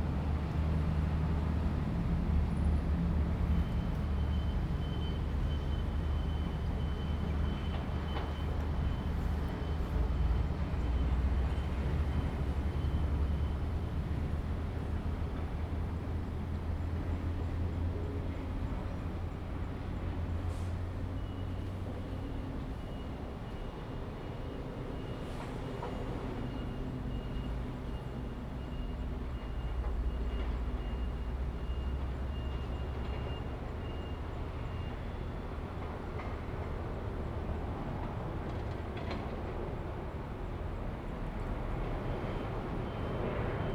中華路, Dayuan Dist., Taoyuan City - Basketball court
The sound of construction, The plane flew through, traffic sound, bird, Zoom H2n MS+XY
Taoyuan City, Taiwan, 18 August